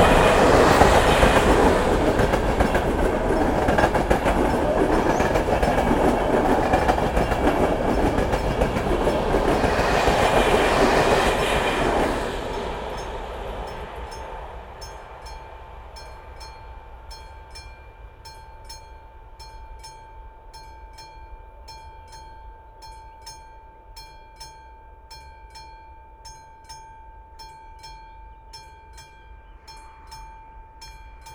Train and bell, Dolni Pocernice station